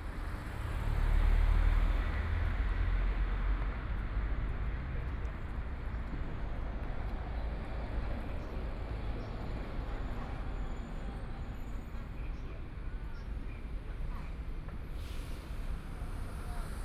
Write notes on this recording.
In the corner, Traffic Sound, Binaural recording, Zoom H6+ Soundman OKM II